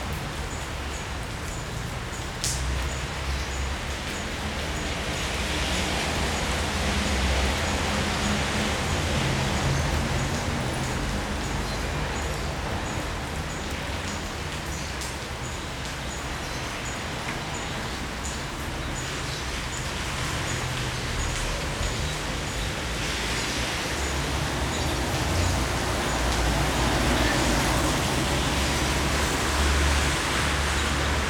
Maribor, Slovenia - urban rain with birds
seeking shelter from the rain, i found myself in the covered entryway at the back of an apartment building, slightly away from the main road and it's wall of traffic noise. as the rain subsided a few birds began exclaiming their relief at it's end.
26 August 2012, 12:48